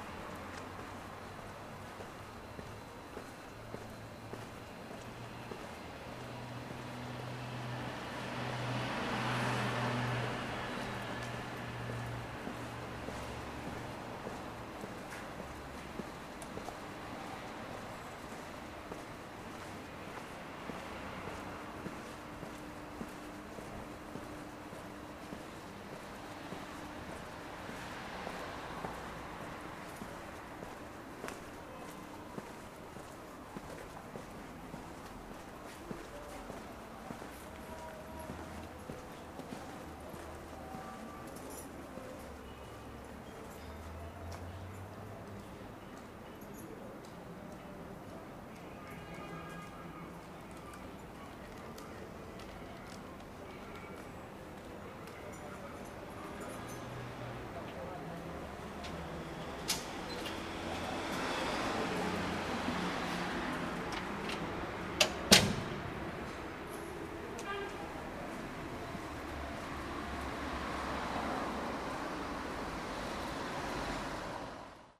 Fullmoon Nachtspaziergang Part XI
Fullmoon on Istanbul, passing through a small quiet street, the sounds remain mainly behind the walls.